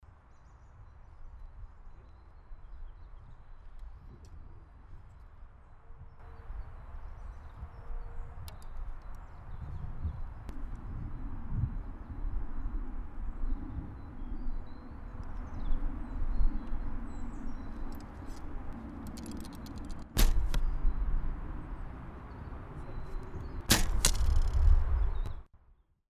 mittags, stereoaufnahme von übungsschüssen eines ehemaligen deutschen meisters im bogenschiessen - no. 1
project: :resonanzen - neandereland soundmap nrw - sound in public spaces - in & outdoor nearfield recordings